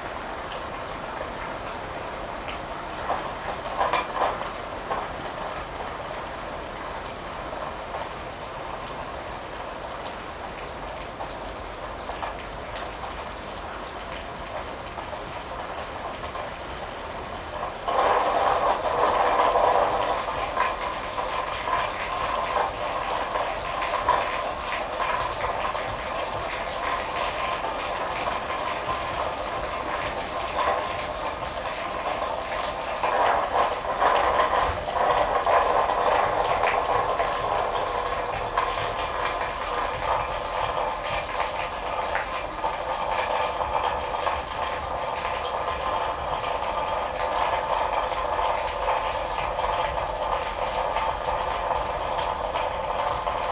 Yville-sur-Seine, France - Rain in a barn
We are hidden in a barn, during a powerful rain.